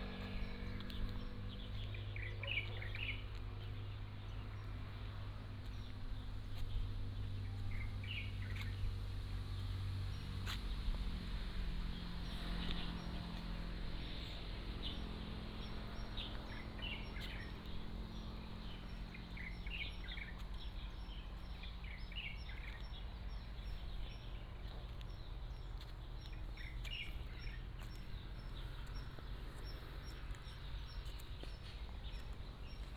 Birds singing, Traffic Sound, Abandoned military base